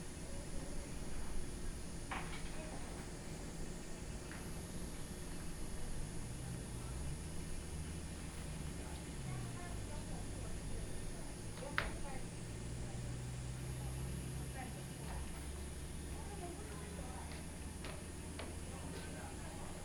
芭崎瞭望台, Fengbin Township - Tourists Recreation Area
In a small Tourists Recreation Area, Cicadas sound, Very hot days